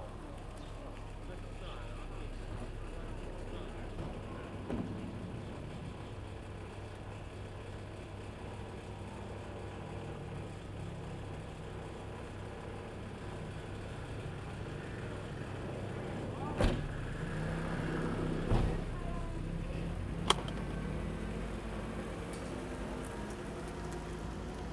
Jinlun Station, Taimali Township - In the square
In the square in front of the station, Small village, Traffic Sound, Many people gathered in the evening outside the station square
Zoom H2n MS +XY